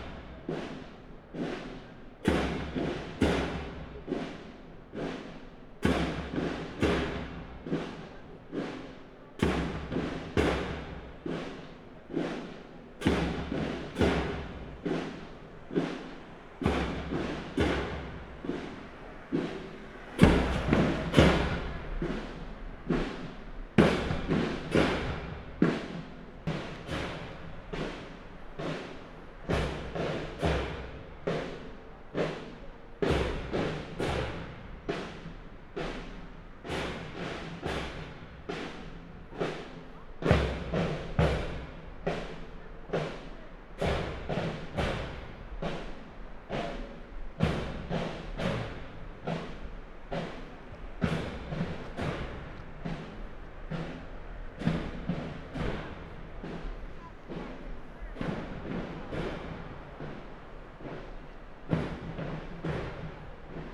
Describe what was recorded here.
Firefighters_music_band, drums, water, river, Arcos_de_Valdevez